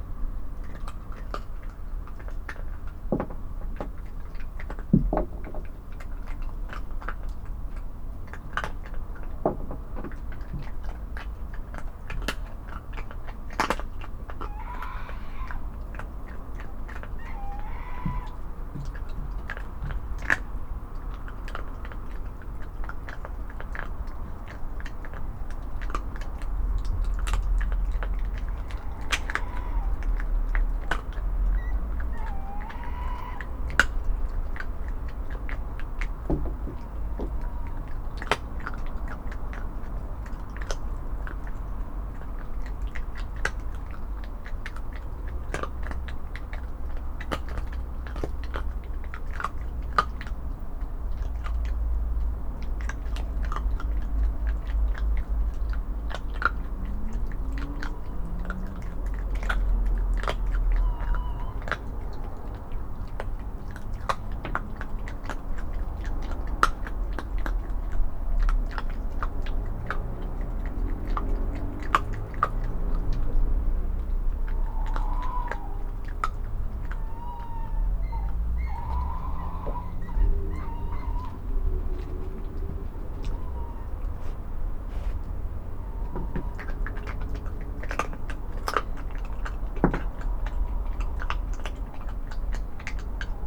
10.14pm on a breezy evening. A fox is munching bones I have put on the wooden deck for him. Owls call and an apple falls hitting the wheelbarrow. A jet flies over.
MixPre 6 II with 2 Sennheiser MKH 8020s
West Midlands, England, United Kingdom, 23 August 2021